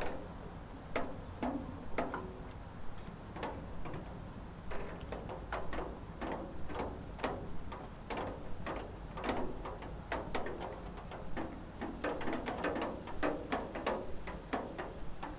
Wolbrom, Polska - Melting snow
Melting snow, handy recorder zoom h4n
13 November 2016, 10:30